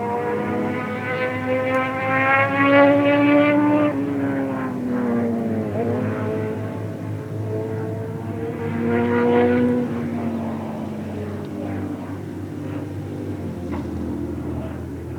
Walking Holme motorbikes
motorbikes climbing up to Holme Moss summit
Dewsbury, Kirklees, UK, April 2011